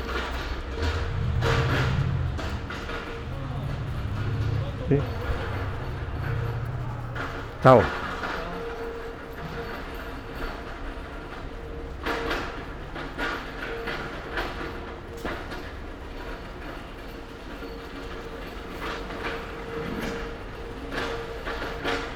"Autumn shopping afternoon in the time of COVID19": Soundwalk
Chapter CXLV of Ascolto il tuo cuore, città. I listen to your heart, city
Monday December 7th 2020. Short walk and shopping in the supermarket at Piazza Madama Cristina, district of San Salvario, Turin more then four weeks of new restrictive disposition due to the epidemic of COVID-19.
Start at 4:37 p.m., end at h. 5:17 p.m. duration of recording 40’01”''
The entire path is associated with a synchronized GPS track recorded in the (kml, gpx, kmz) files downloadable here:
Torino, Piemonte, Italia, 7 December 2020